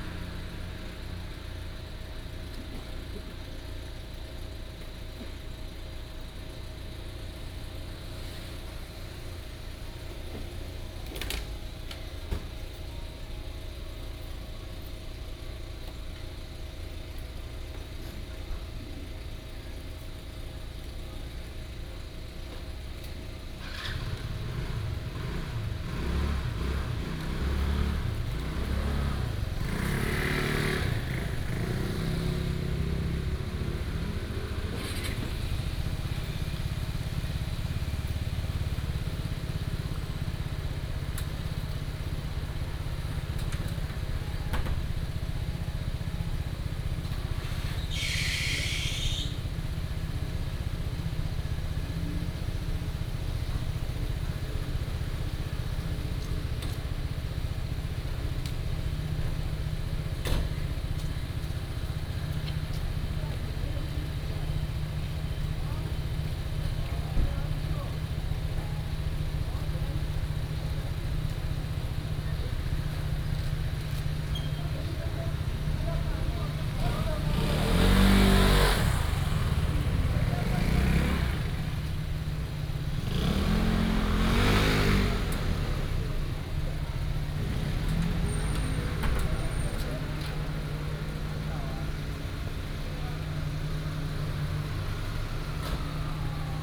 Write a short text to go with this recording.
Morning in the village center of small village, Traffic sound